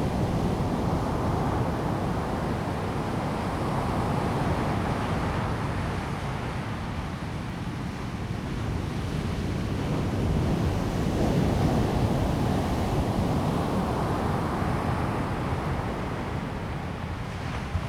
旭海牡丹灣, Mudan Township - At the beach
At the beach, Sound of the waves, wind
Zoom H2n MS+XY
2 April 2018, ~13:00